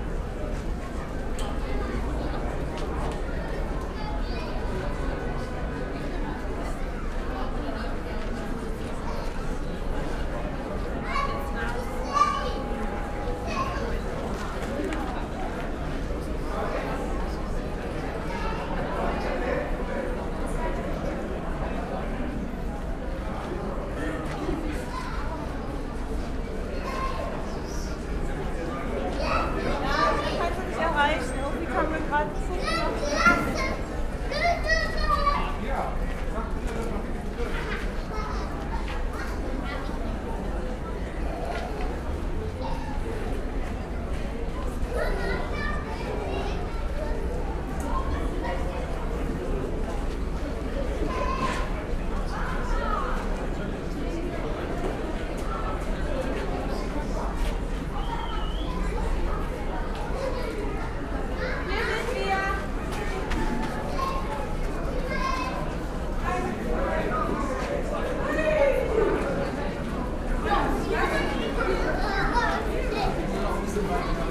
Berlin, Kreuzberg, former Berlin Wall area, poeple passing-by under bridge, 25y of German Unity celebrations.
(Sony PCM D50, DPA4060)